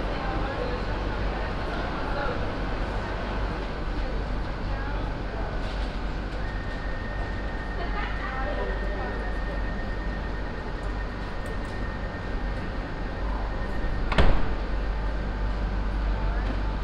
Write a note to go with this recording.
At the platform no.1 of Luxemburg main station. The sounds of a train standing at the platform - engine running - passengers entering the train - a youth group at the platform joking around - the train departure, international city soundmap - topographic field recordings and social ambiences